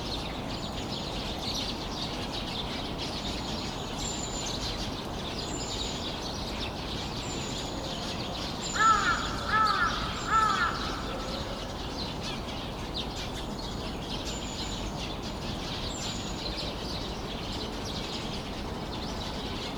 대한민국 서울특별시 서초구 잠원동 85 - Sinbanpo Apt, A Flock of Birds
Sinbanpo Apt, a flock of birds making noise
신반포아파트, 나무 위의 새떼들